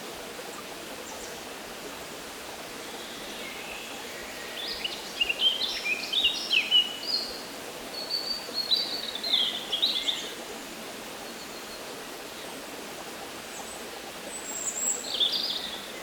Surjoux, France - Near the river
At the end of this path, there's a big fall called Le Pain de Sucre. In french it means the sugar bread. It's because there's an enormous concretion like a big piece of sugar. Here the sound is the quiet river near the fall, with discreet birds living near the river.